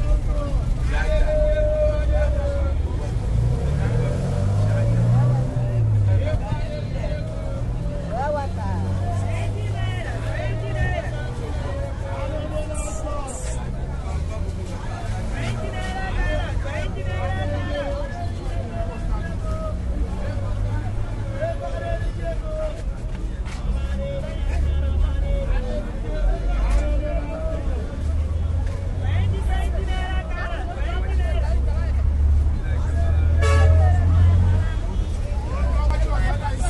Yaba Bus Park - Yaba Bus Park (LagosSounscape)